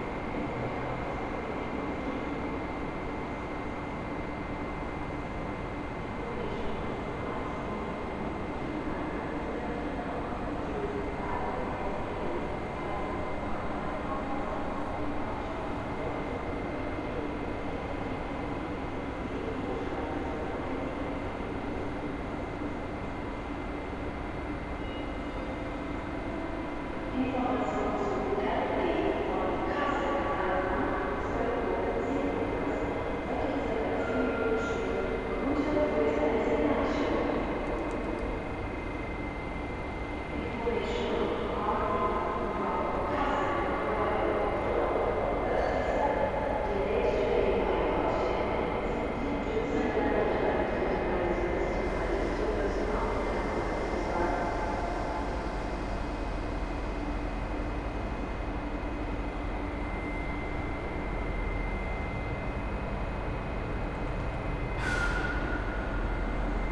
Frankfurt (Main) Hauptbahnhof, Gleis - 27. März 2020 Gleis 18
Actually the recorded platform also last week was 18. While on the 20th of march an anouncement is to be heard that the train to Bruessels does not leave, there is nothing today. The train is still in the schedule, but it is not anounced anymore. Just silence. What is to be heard are the anouncements for regional trains, in this case to Wächtersbach. The microphone walks through a tunnel to a different platform (11).